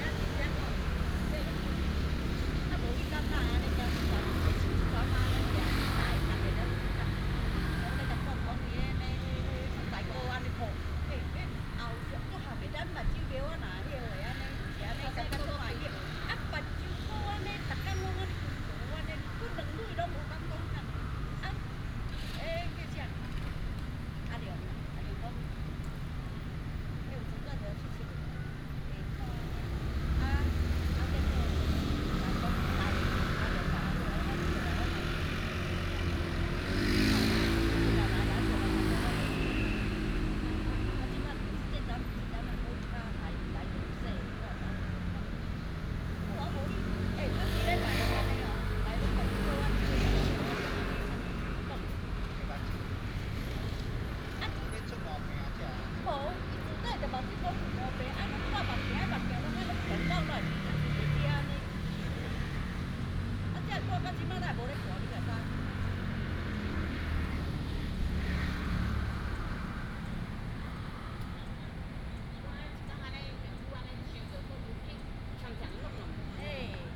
頭家公園, Tanzi Dist., Taichung City - in the Park
in the Park, Traffic sound, A group of older people chatting at the junction, Childrens play area, dog sound, Binaural recordings, Sony PCM D100+ Soundman OKM II